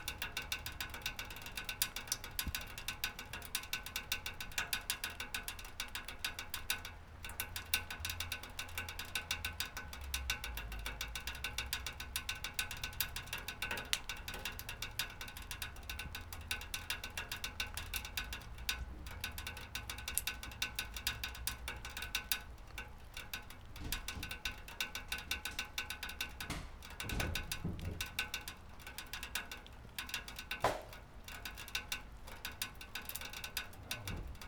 Piatkowo district, Marysienki alotments - rain drum roll
rain drops going down and hitting the bottom of the drainpipe after heavy storm. owner of the place rummaging in the garage.
województwo wielkopolskie, Polska, European Union, 10 May